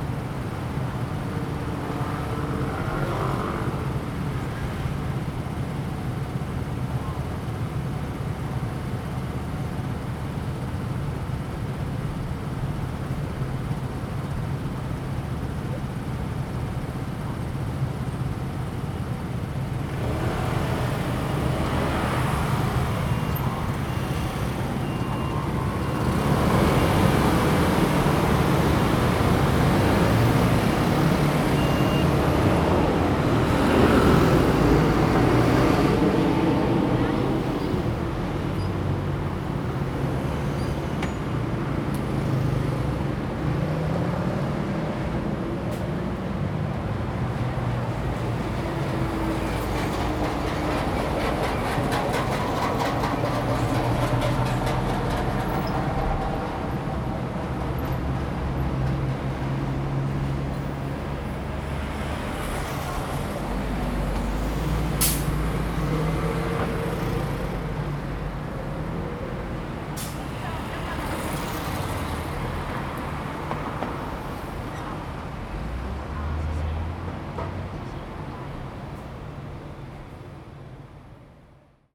In the corner of the road, Traffic Sound
Zoom H2n MS+XY
Taichung City, Taiwan